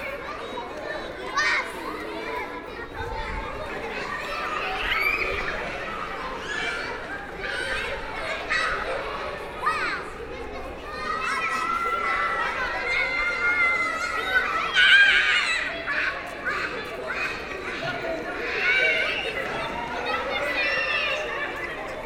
Porte Saint-Denis, Paris, France - Children in a playground
In the Jardin Saint-Lazare school, young children are playing in a large playground during the lunchtime.